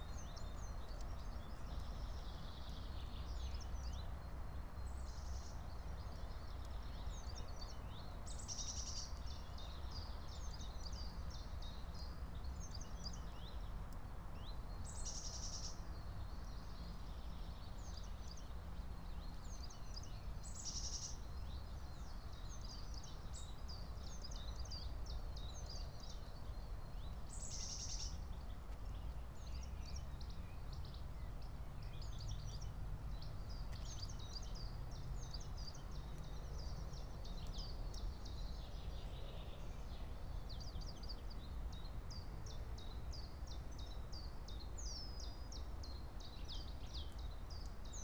09:29 Berlin Buch, Lietzengraben - wetland ambience. Bird pulling fake fur from the microphone's wind protection.
Deutschland, 16 April 2022